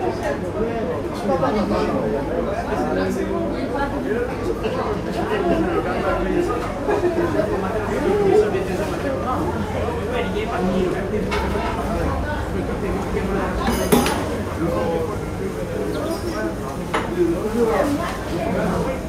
Snack Bar Animé Conversations-Vaisselle-Chaises_St Denis 10H